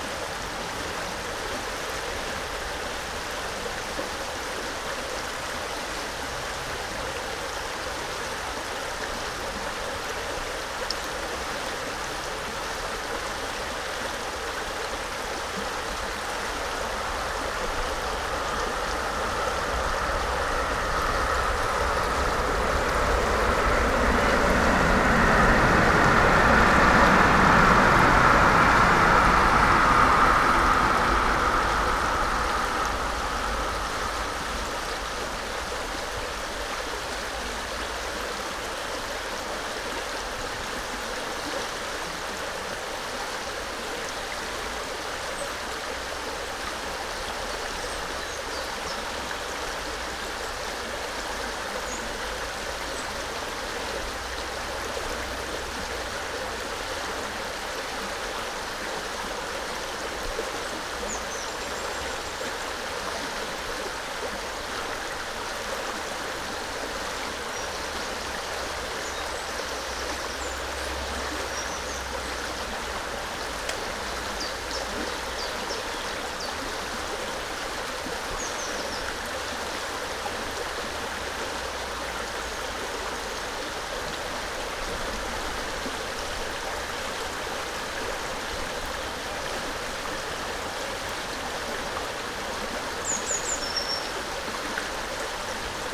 Mont-Saint-Guibert, Belgique - The river Orne
Recording of the river Orne, in a pastoral scenery.
in front of me, a nutria is swimming and after, eating on the bank. A train to Namur is passing by.
Mont-Saint-Guibert, Belgium, 10 April 2016, ~14:00